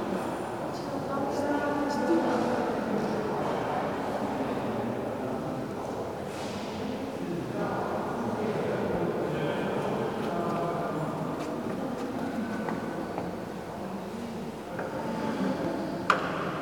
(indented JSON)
{
  "title": "Delft, The Netherlands - \"lelijke lampen joh, afschuwelijk\"",
  "date": "2012-10-05 17:10:00",
  "latitude": "52.01",
  "longitude": "4.36",
  "altitude": "5",
  "timezone": "Europe/Amsterdam"
}